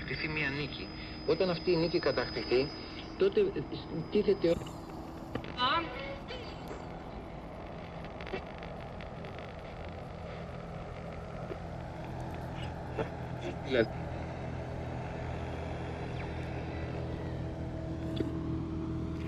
Radios on the Vltava beach
Radios on the Smíchov beach near Železniční most are being re-tuned in realtime according to sounds of Vltava - Moldau. Underwater sonic landscapes and waves of local boats turn potentiometers of radios. Small radio speakers bring to the river valley voices from very far away…
6 April, 13:49